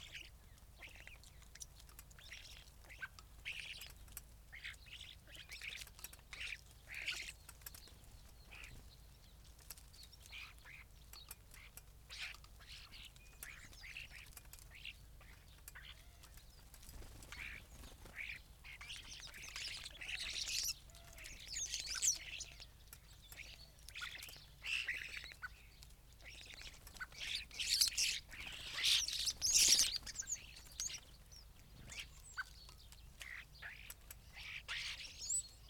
Luttons, UK - starlings on bird feeders ...
starlings on bird feeders ... open lavalier mic clipped to bush ... recorded in mono ... calls from collared dove ... blackbird ... dunnock ... greenfinch ... some background noise ...